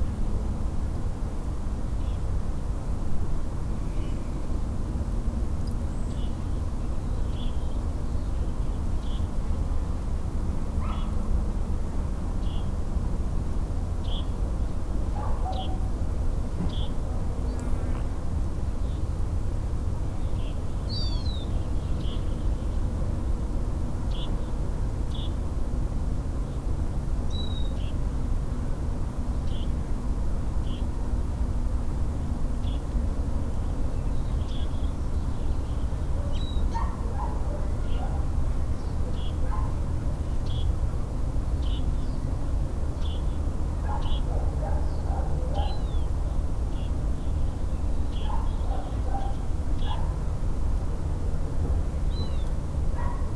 Prta del Bosque, Bosques del Refugio, León, Gto., Mexico - En el camino del Cerro Gordo.
On the way to Cerro Gordo.
Some birds, very distant traffic, some flies or mosquitoes, some other animals, a closer vehicle, and the footsteps of someone who passed by on the path made of stones are heard.
I made this recording on september 13th, 2022, at 10:23 a.m.
I used a Tascam DR-05X with its built-in microphones and a Tascam WS-11 windshield.
Original Recording:
Type: Stereo
Se escuchan algunos pájaros, tráfico muy lejano, algunas moscas o zancudos, algunos otros animales, algún vehículo más cercano y los pasos de alguien que pasó cerca por el camino empedrado.
Esta grabación la hice el 13 de septiembre 2022 a las 10:23 horas.